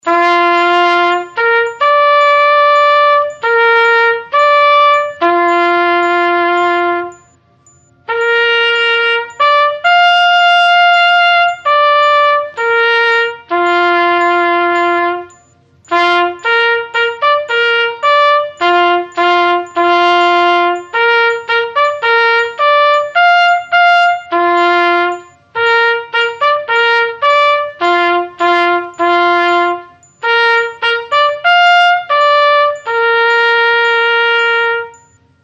Leonardo Campus, LIVING SPACES, army orchester
Nordrhein-Westfalen, Deutschland, European Union